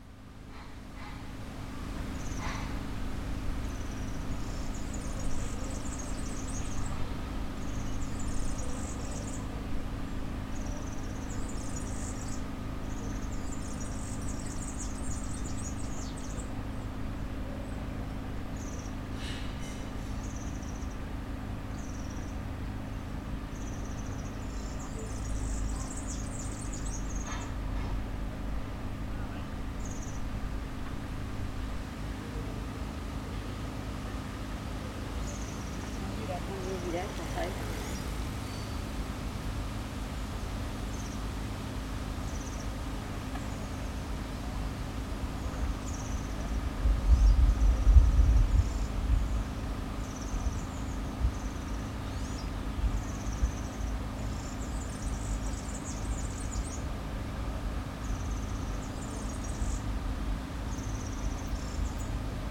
Allée Marcel Mailly, Aix-les-Bains, France - Serin cini
Au bord de la piste cyclable près du Sierroz un serin cini solitaire, bruits de travaux, quelques passants.
France métropolitaine, France, July 2022